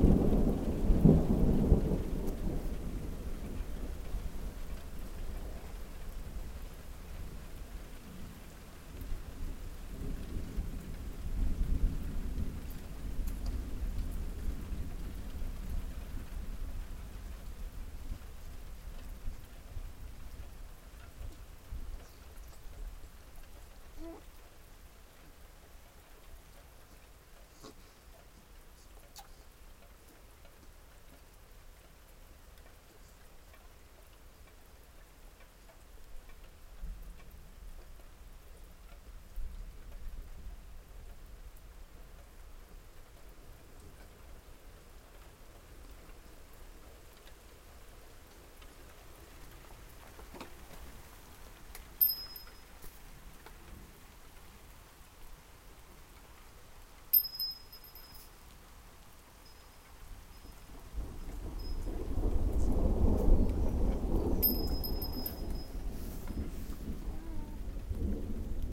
thunderstorm - Propach, thunderstorm
recorded june 1, 2008 - project: "hasenbrot - a private sound diary"